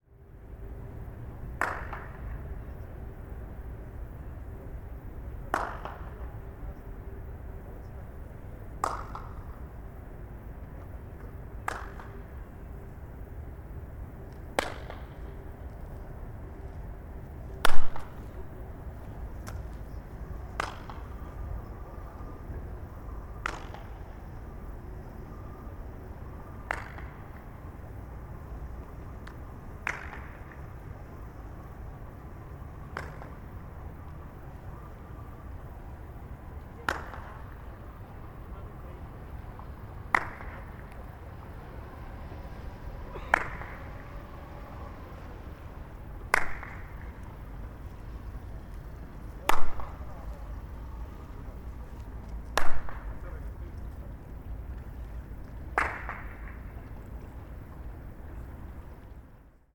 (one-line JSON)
{"title": "pedestrian bridge echoes, Vienna", "date": "2011-08-12 10:24:00", "description": "echoes from under the pedestrian bridge", "latitude": "48.22", "longitude": "16.42", "altitude": "160", "timezone": "Europe/Vienna"}